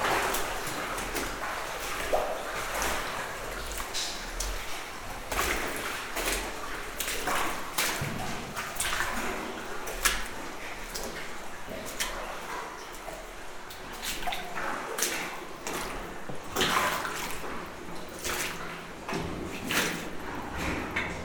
Lathuile, France - Walking in the mine
Walking into the Entrevernes mine, a very muddy place. It was a coalmine, but there's a ferriferous clay, so everything is red and so much dirty !